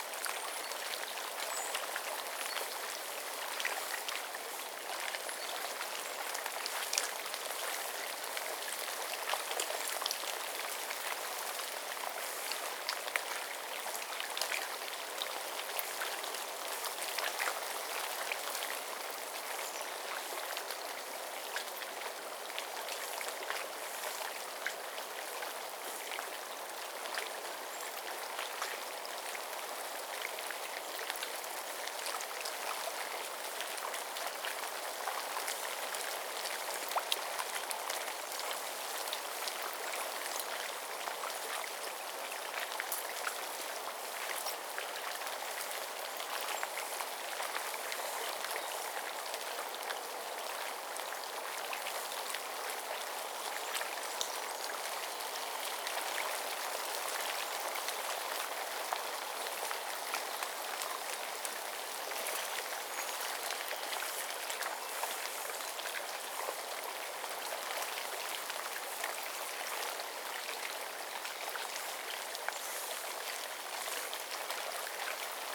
Ottawa River with many ice crystals sloshing about along the shore. Also robins and other birds singing. Zoom H2n with highpass filter post-processing.